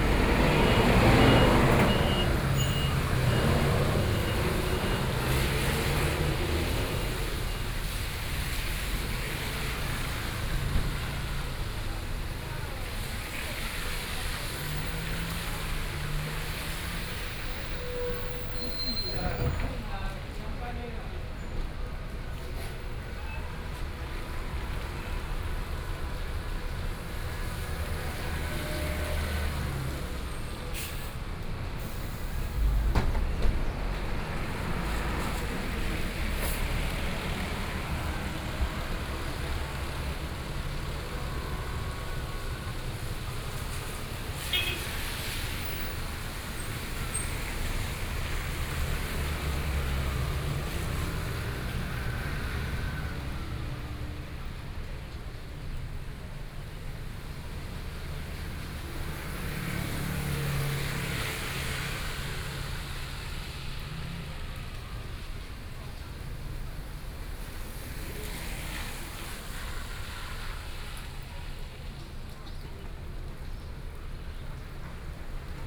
Muzha, Taipei - Bus stop
in front of the Bus stop, Sony PCM D50 + Soundman OKM II
Wenshan District, Taipei City, Taiwan, 30 September 2013, ~15:00